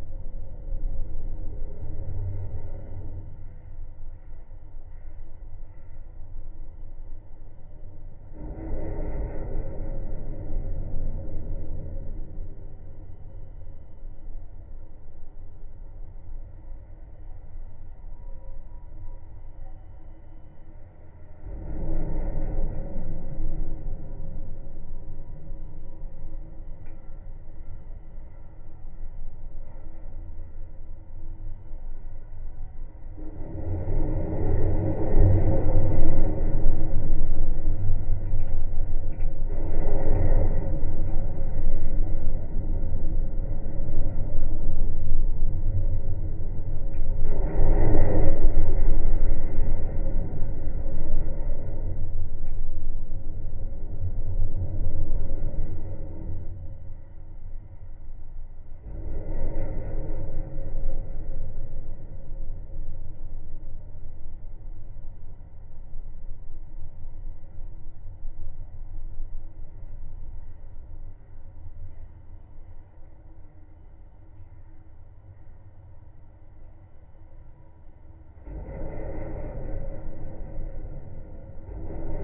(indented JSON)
{
  "title": "Vilnius, Lithuania, Liubertas Bridge vibrations",
  "date": "2021-03-03 14:00:00",
  "description": "Bridge listened through its metallic constructions. Contact microphones and geophone.",
  "latitude": "54.69",
  "longitude": "25.26",
  "altitude": "80",
  "timezone": "Europe/Vilnius"
}